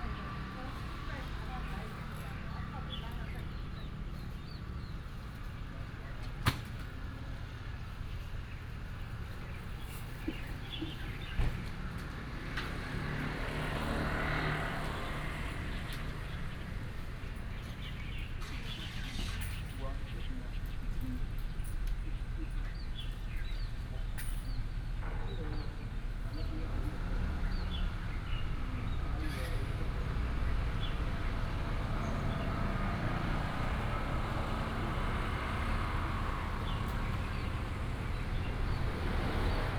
空軍十七村, North Dist., Hsinchu City - Morning street
Vendors, breakfast, Bird call, Traffic sound, Binaural recordings, Sony PCM D100+ Soundman OKM II